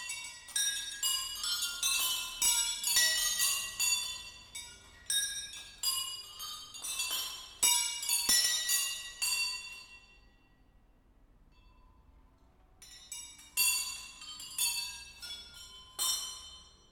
Rue Pen ar Bed, Confort-Meilars, France - Chime wheel
This is a recording of chime wheel ("roue à carillons" in french), also named "fortune wheel" or "glory wheel", which used to be a very common percussion instrument in the Middle Ages in Brittany. It is comprised of twelve small bells covering the twelve notes composing an octave.
Those wheels were primarily used for celebrating baptisms and weddings. This specific wheel can be found in the Notre-Dame-de-Confort church, and is the only known remaining in the Finistère, at the extreme west part of Brittany.
10 August 2016, 17:00